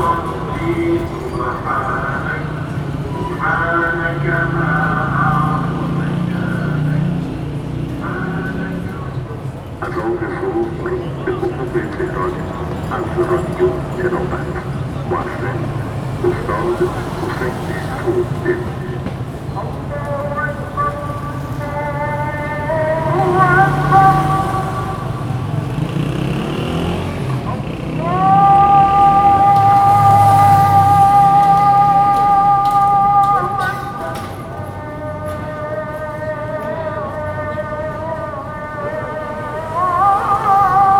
Tehran Province, Tehran, بازار آهنگران، پلاک, Iran - Singing of a Prayer